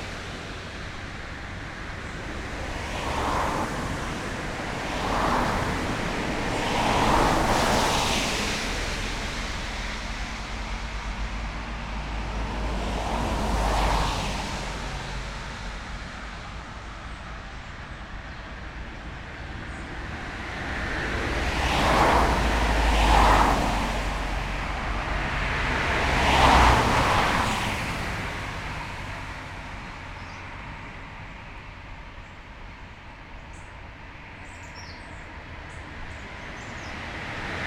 Grčna, Nova Gorica, Slovenia - Right before entering Nova Gorica
Recorded with Jecklin disk and Lom Uši Pro microphones with Sound Devices MixPre-3 II recorder, cca. 2-3m from the road in the bushes. Forgot to split audio left and right.